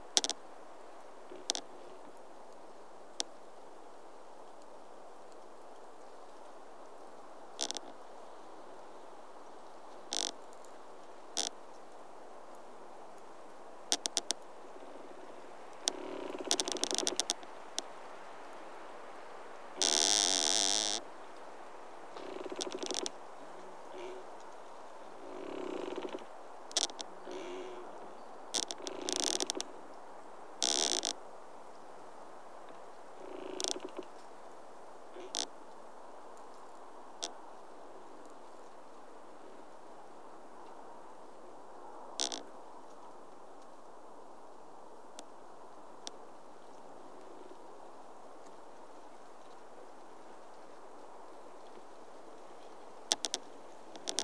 Voverynė, Lithuania, rubbing trees
trees rubbing to each other in a wind. close up recording